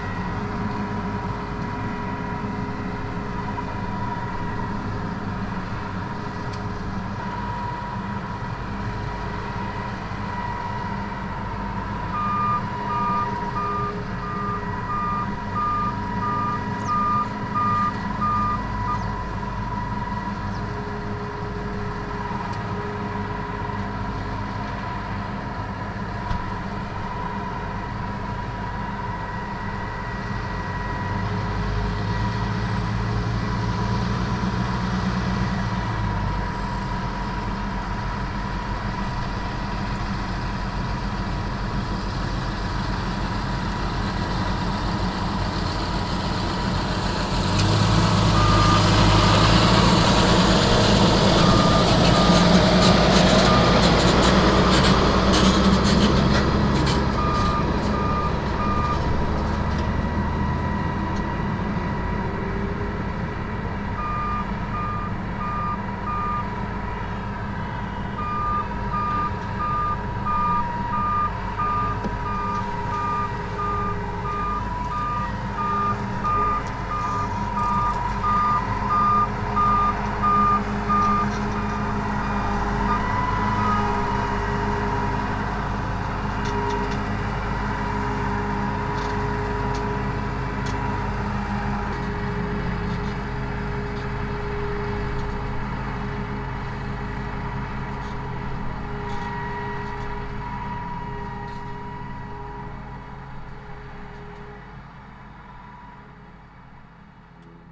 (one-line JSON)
{"title": "Saint-Martin-de-Nigelles, France - Combine harvester", "date": "2018-07-19 15:00:00", "description": "During a very hot summer, a combine harvester in the corn fields", "latitude": "48.61", "longitude": "1.60", "altitude": "128", "timezone": "Europe/Paris"}